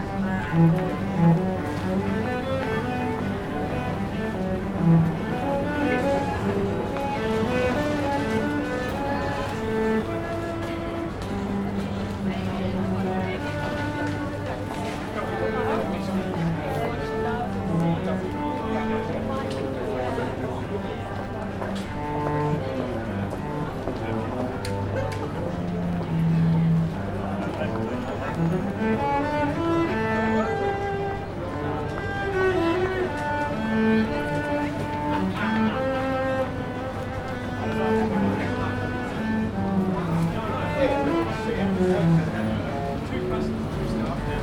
neoscenes: viola player in front of Coles